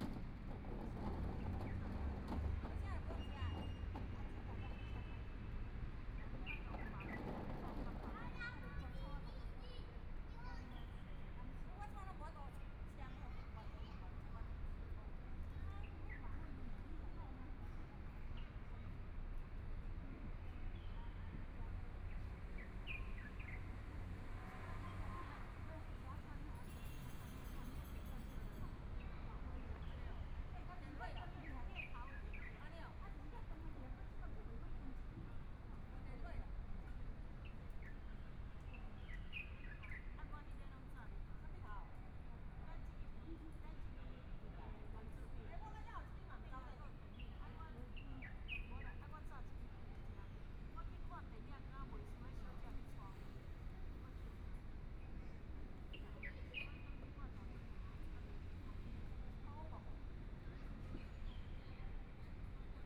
{"title": "永直公園, Taipei City - Sitting in the park", "date": "2014-02-25 17:43:00", "description": "Sitting in the park, Traffic Sound, Elderly voice chat, Birds singing, Children's play area\nBinaural recordings\nZoom H4n+ Soundman OKM II", "latitude": "25.08", "longitude": "121.55", "timezone": "Asia/Taipei"}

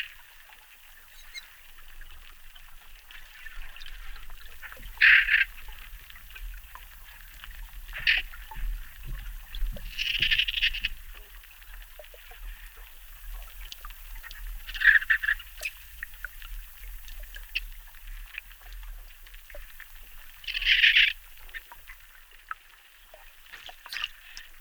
{"title": "Rio Tefé - Dolphins", "date": "2017-09-19 09:00:00", "description": "Amazonian Dolphins in the Rio Tefé, close to the community of Tauary. Recorded in September 2017.", "latitude": "-3.61", "longitude": "-64.96", "altitude": "30", "timezone": "America/Manaus"}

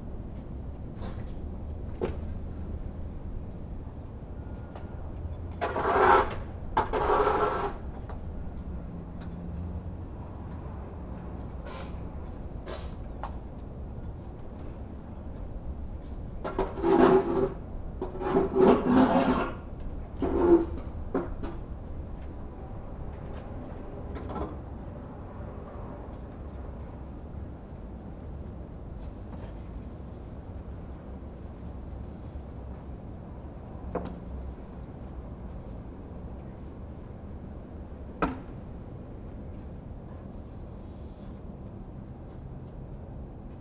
{"title": "Ave, Ridgewood, NY, USA - After the snow storm", "date": "2018-03-22 14:30:00", "description": "Street sounds after a snow storm.\nMan shoveling the snow from the sidewalks.\nZoom h6", "latitude": "40.70", "longitude": "-73.90", "altitude": "28", "timezone": "America/New_York"}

